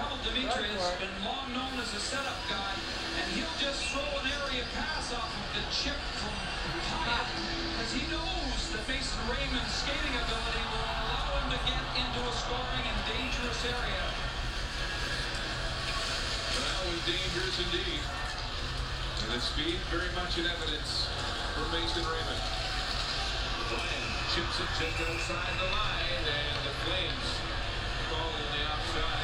vancouver, granville street, in front of a sports bar
Vancouver, BC, Canada